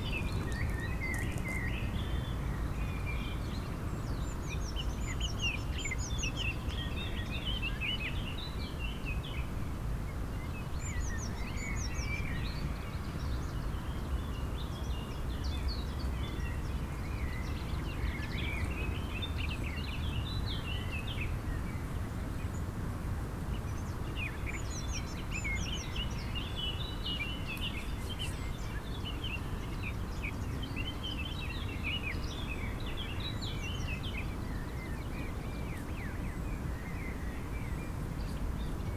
birds and sheep
the city, the country & me: may 8, 2011
Radevormwald, Germany, 8 May, 19:22